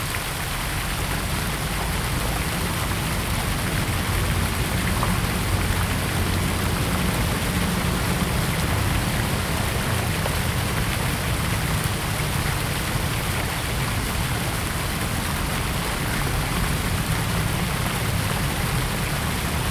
Sec., Ren'ai Rd., Da'an Dist. - Fountain
Fountain, Traffic Sound
Zoom H2n MS+XY
Da’an District, Taipei City, Taiwan, 18 June 2015